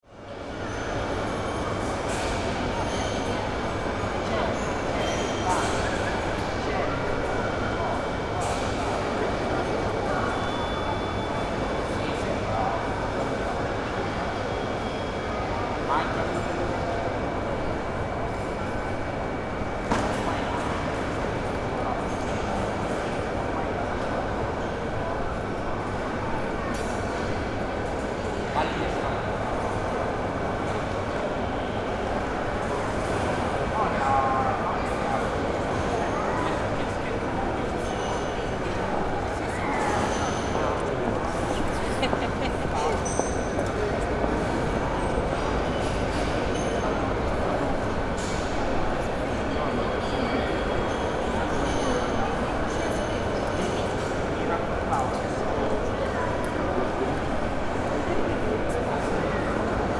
catania airport - gate, checkin
catania airport, gates, ambiance
Catania CT, Italy, 27 October, ~16:00